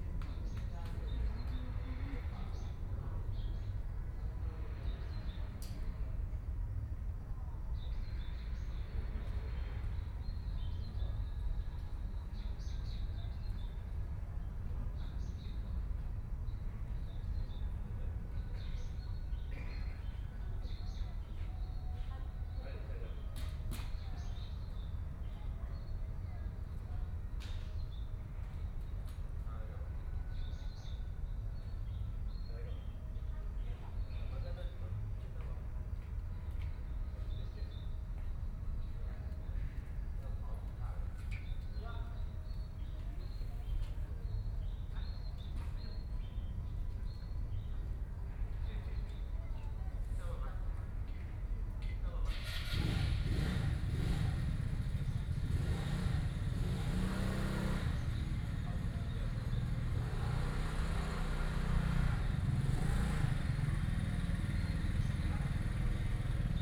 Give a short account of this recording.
Sitting in the park, Construction noise, Birdsong, Insects sound, Aircraft flying through, Binaural recordings